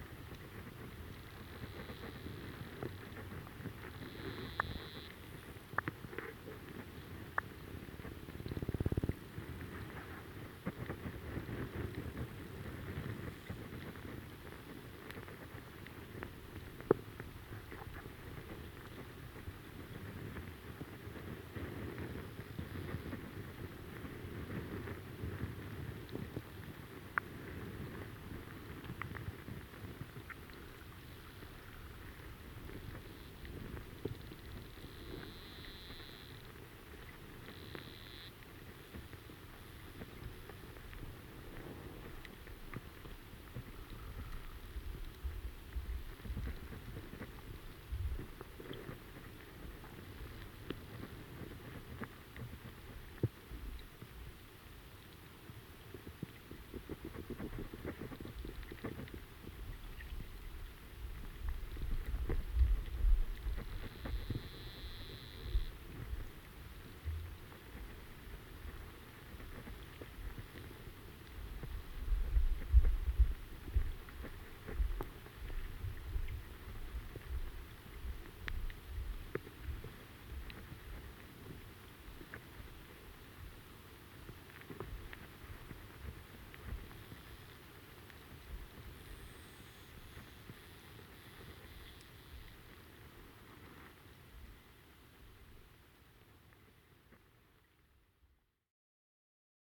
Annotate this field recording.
underwater sound flow in river Sventoji. hydrophone recording.